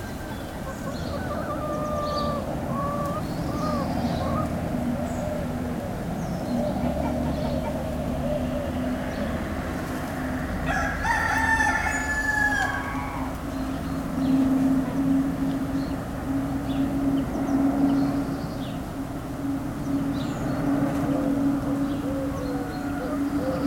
Houx, France - Chicken and rooster
Farm ambiance in the quiet village of Houx.